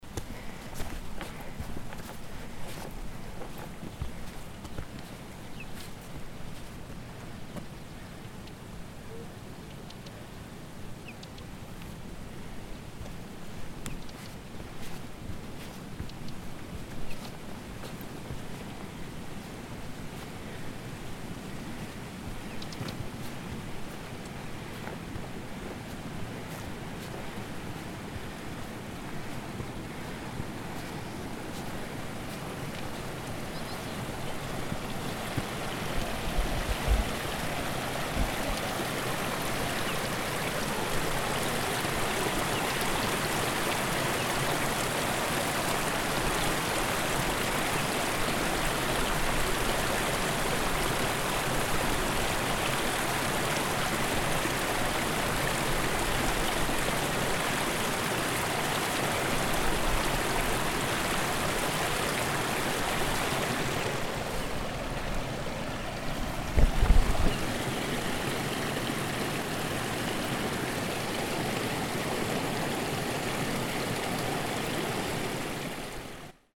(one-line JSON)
{"date": "2011-07-08 18:02:00", "description": "Zugangsweg zum Alphotel, der Mond soll hier betörend scheinen, noch ist es ein wenig bedeckt, doch heute ist Halbmond, wird werden sehen, die Luft ist geschmeidig udn rein", "latitude": "46.41", "longitude": "7.77", "altitude": "2048", "timezone": "Europe/Zurich"}